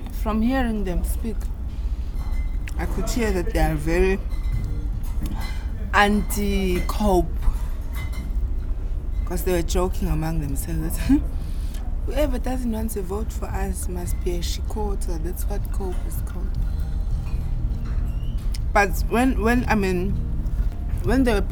Bat Centre, South Beach, Durban, South Africa - don't say a word...
Faith is full of stories...
recorded during the Durban Sings project